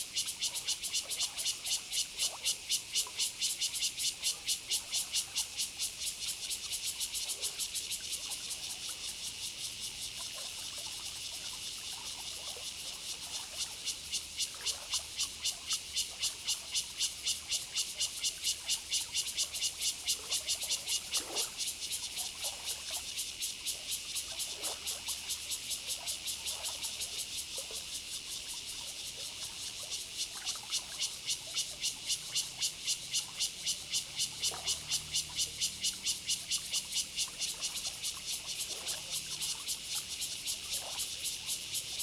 里瓏里, Guanshan Township - Cicadas and streams
Cicadas sound, Traffic Sound, The sound of water, Streams waterway, Very hot weather
Zoom H2n MS+ XY
7 September 2014, ~12:00